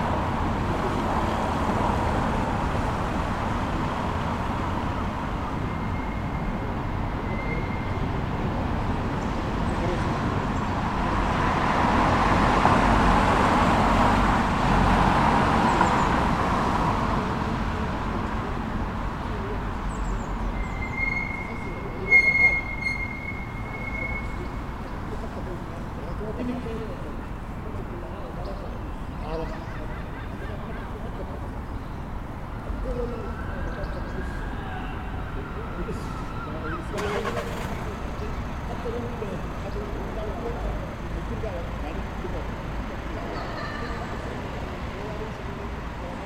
St-Annes Cathedral
Standing in the local park across the cathedral brought on new perspectives, I was able to stand in the middle of this giant circle area listening to people at different points in the park. There were kids somewhere in far back that were just hanging out together, to my left two men who were discussing something quite important, workers leaving the office, and even some guests for a local hotel to my right. This one spot generated multiple sonic activities as if we weren’t just in lockdown for almost three months. People just went back to what they were doing, as almost nothing happened.